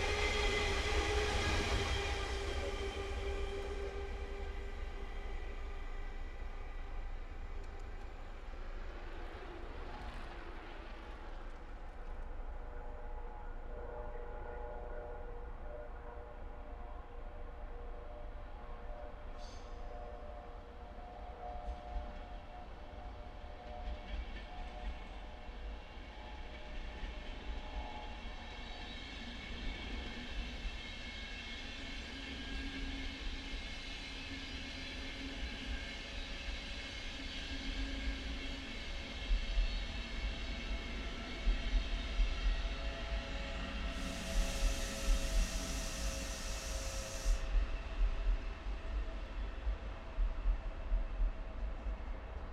Helsinki, Finland - Trains between Helsinki mainstation and Pasila
Trains manoeuvring between Helsinki main station and Pasila on 26.10.2015, around 20:00h.
Recorded with a LOM stereo pair of Omni microphones and (separate file but simultaneously) an Electrosluch 3 to record electrostatics. Minimal editing done, no cutting.
26 October 2015, 20:00